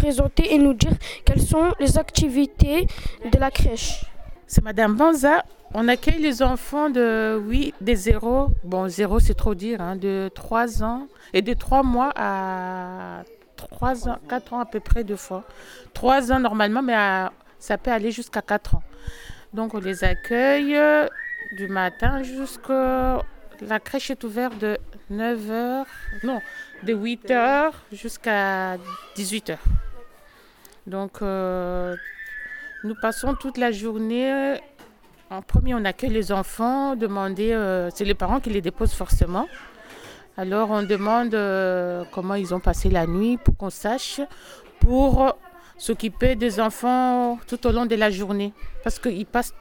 Rue dOran, Roubaix, France - Crèche La Luciole
Interview d'Ingrid et Mireille, animatrices
7 May 2019, 11:41